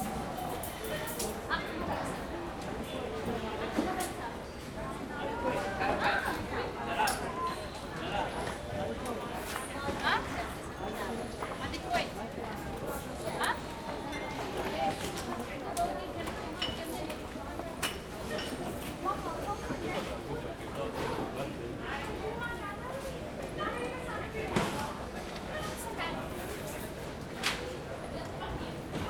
Dubai International Airport (DXB) - Avari Dubai Hotel - Al Rigga Rd - Dubai - United Arab Emirates - DXB Outside Duty Free
I thought I'd capture the the ambience as I won't be hearing this place for a year.
D 64 - Dubai - United Arab Emirates, 30 December 2011